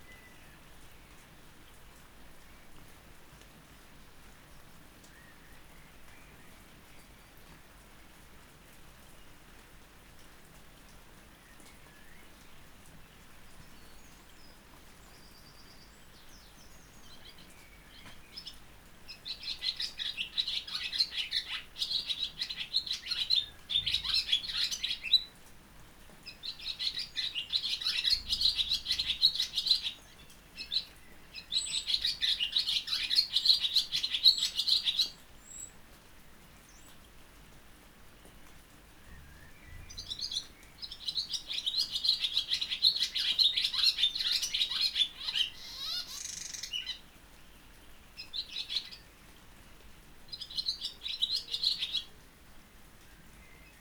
Luttons, UK - Under the shed ... in the rain ...
Under the shed ... in the rain ... starts with a swallow 'chattering' close to its nest ... then calls and song from ... blackbird and wren ... recorded with Olympus LS 14 integral mics ...